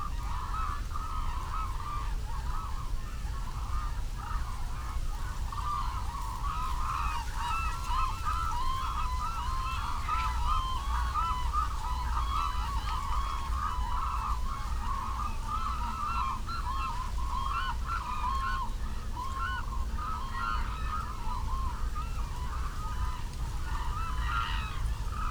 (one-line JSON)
{"title": "Black hooded cranes from 200m 흑두루미 - Black hooded cranes from 200m 훅두루미", "date": "2020-01-25 12:30:00", "description": "migratory birds gather and socialize in post harvest rice fields...distant sounds of surrounding human activity...", "latitude": "34.88", "longitude": "127.51", "altitude": "4", "timezone": "Asia/Seoul"}